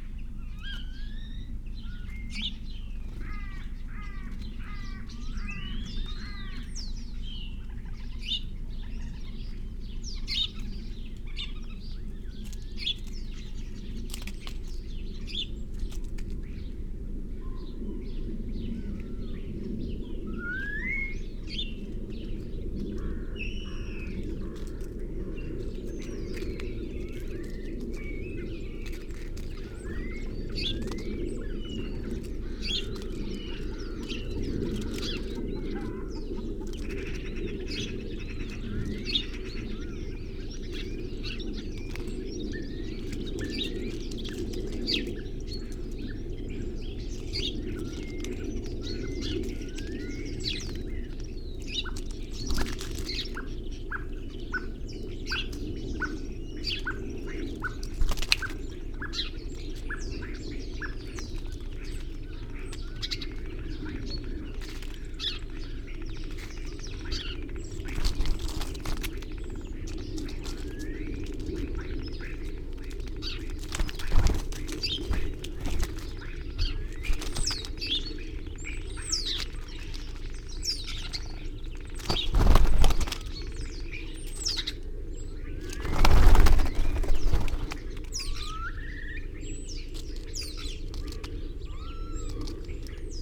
bird feeder soundscape ... SASS ... bird calls from ... pheasant ... crow ... red-legged partridge ... robin ... blackbird ... collared dove ... starling ... tawny owl ... wren ... dunnock ... magpie ... house sparrow ... background noise ...